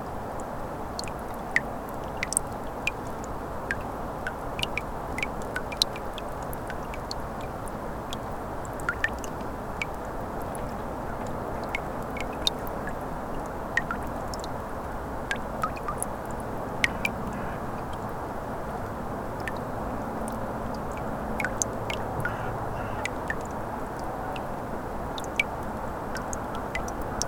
Water dripping from the melting ice plates on the shore of Neris river. Recorded with ZOOM H5.